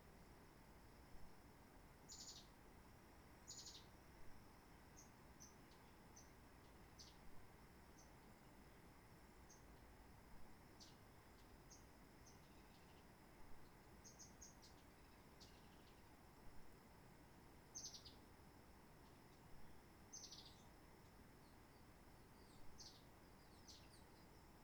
Roche Merveilleuse, Réunion - Calme de la forêt sans le tourisme héliporté (matin)
Les oiseaux ont encore l'habitude de ne pas être actifs aux horaires habituellement occupé par les hélicoptères.